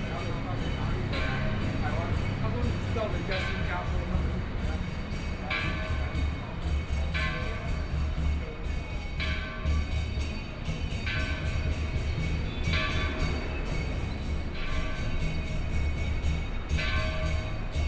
Chongqing N. Rd., Datong Dist. - walking on the Road
walking on the Road, Through a variety of different shops
Please turn up the volume a little
Binaural recordings, Sony PCM D100 + Soundman OKM II